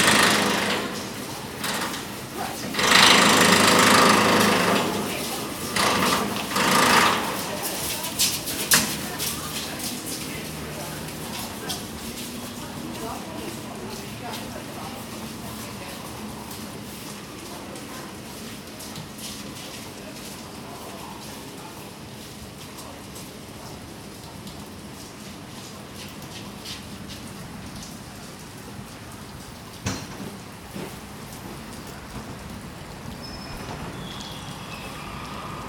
London Borough of Southwark, Greater London, UK - Construction Work at Blackfriars Bridge
1 February